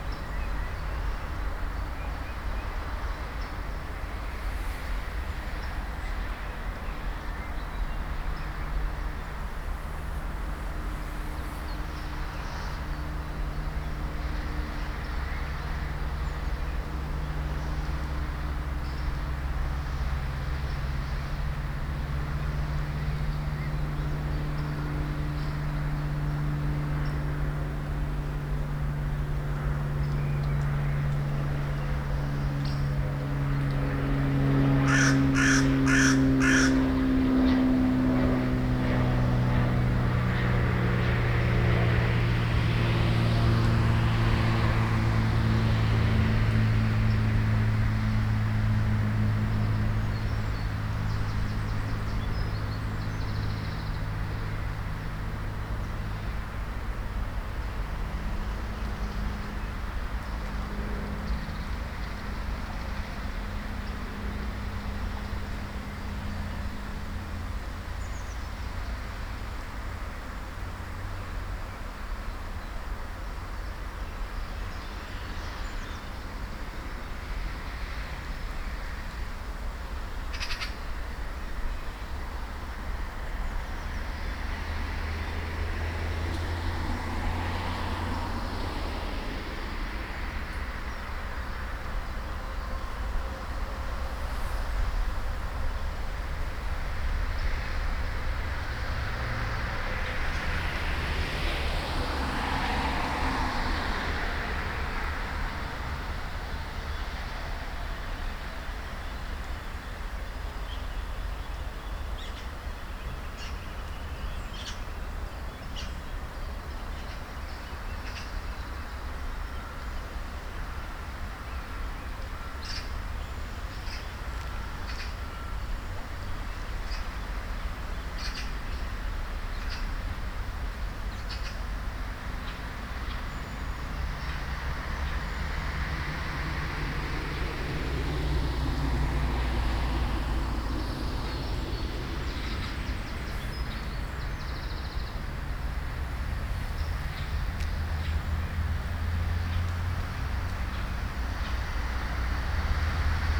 Van Stolkpark en Scheveningse Bosjes, Scheveningen, Nederland - "Ver-Hüell bank"
I was standing in front of the "Ver-Hüell bank" in my lunchbreak and recorded the distant traffic and birds.
Scheveningen, The Netherlands, 12 June 2012